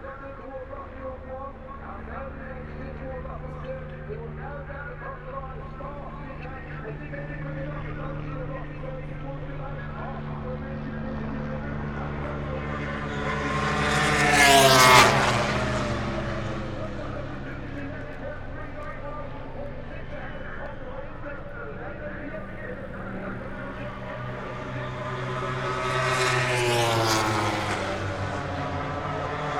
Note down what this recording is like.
British Motorcycle Grand Prix 2018 ... moto grand prix ... qualifying two ... national pits straight ... lavalier mics clipped to baseball cap ...